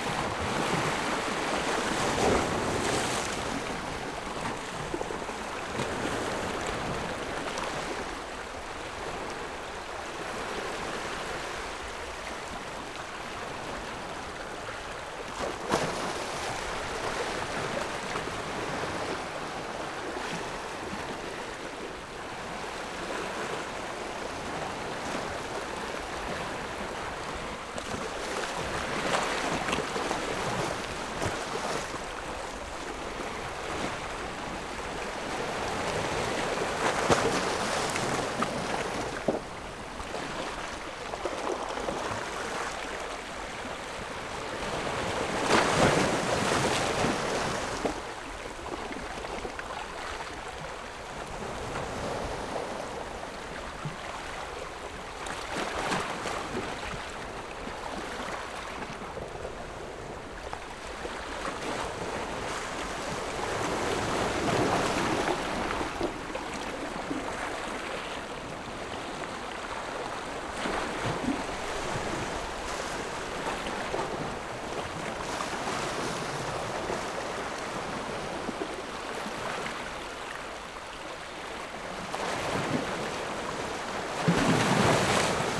科蹄澳, Nangan Township - Waves and tides
On the rocky shore, Sound of the waves
Zoom H6+ Rode NT4